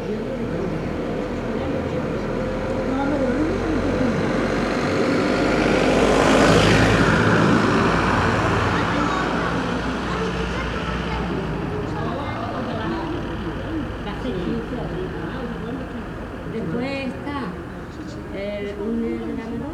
SBG, Plaça Dr. Griera - Viernes
Los viernes son el dia del mercado municipal en Sant Bartomeu. Aunque apenas son dos los puestos que se han instalado esta semana en la pequeña Plaça del Dr Griera, que hace también las veces de centro del pueblo y un habitual lugar de paso y encuentro para los vecinos.
12 August, 11:00